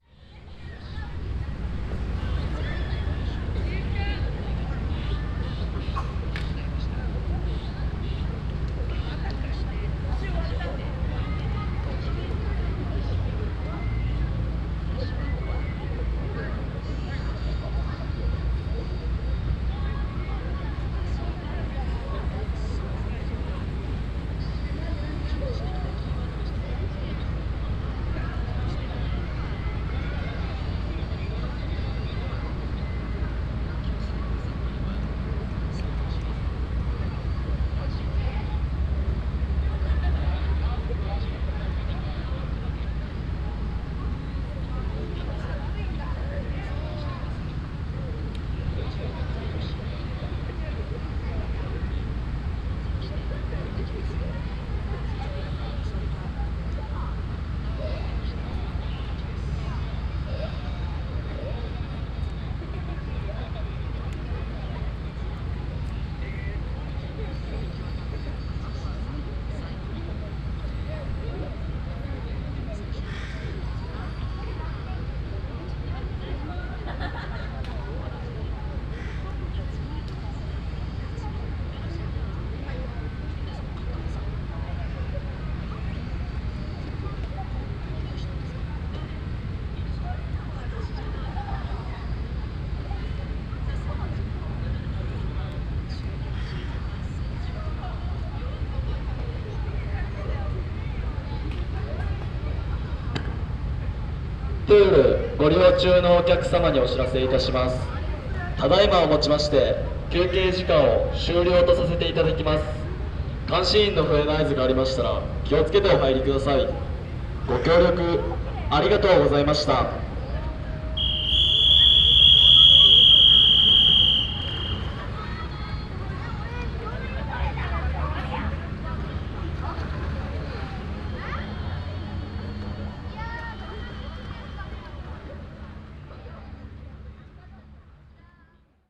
at takasaki public open air swimming pool in the summer afternoon. pool atmosphere interrupted by the houtly end of pool break anouncement and whistles by the life guards
international city scapes - social ambiences and topographic field recordings
21 July